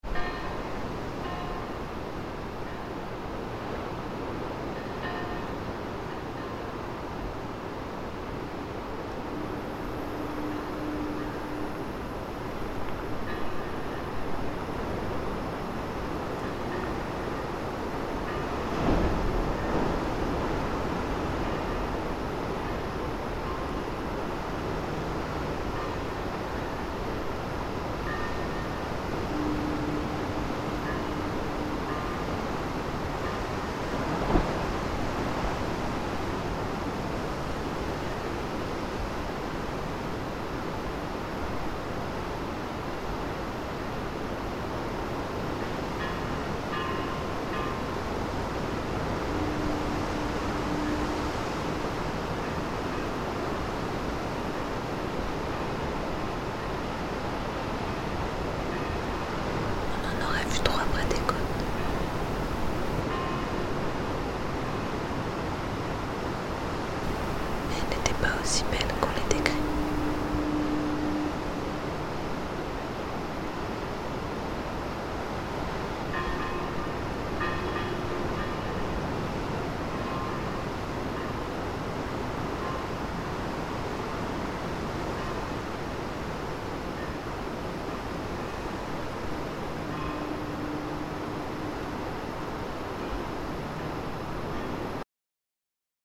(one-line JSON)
{"title": "Ucluelet, BC, Canada - We would have ...", "date": "2013-12-15 10:29:00", "description": "We would have seen three before the coasts...", "latitude": "48.92", "longitude": "-125.53", "altitude": "6", "timezone": "America/Vancouver"}